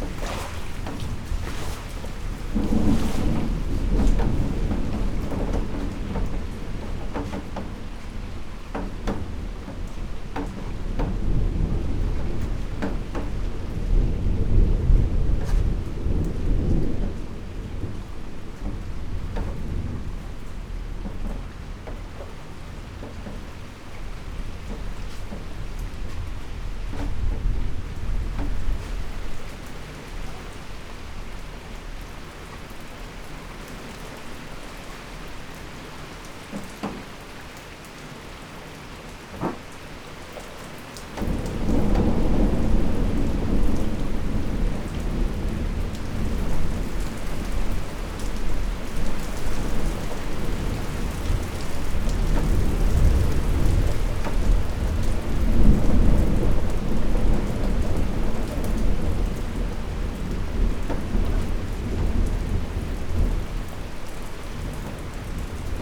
Storm, Malvern, UK
A real-time experience of a storm front that crossed England from the south, the right side of the sound image. The recorder was inside my garage with the metal door open in the horizontal position catching the rain drops. I can be heard in the first moments frantically sweeping out the flood water flowing down the drive from the road above. This is unashamedly a long recording providing the true experience of a long event.
The recorder and microphones were on a chair up under the door to avoid the gusty rain and protected inside a rucksack. The mics were in my home made faux fur wind shield. I used a MixPre 6 II with 2 sennheiser MKH 8020s.
England, United Kingdom, 5 September, ~16:00